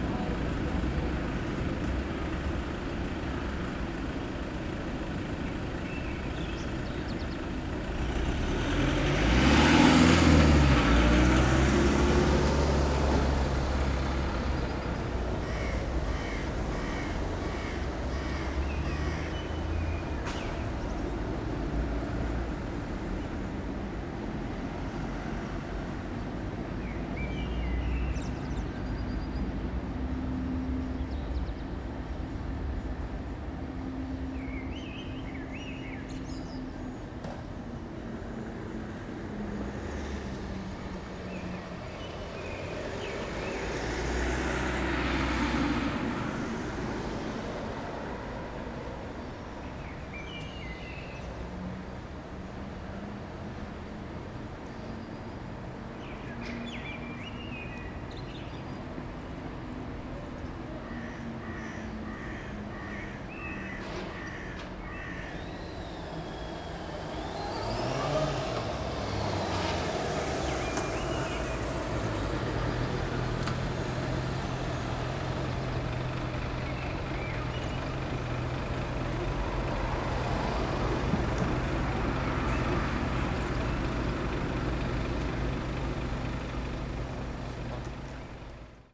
Rue Paul Eluard, Saint-Denis, France - Outside Theatre Gerard Philipe
This recording is one of a series of recording, mapping the changing soundscape around St Denis (Recorded with the on-board microphones of a Tascam DR-40).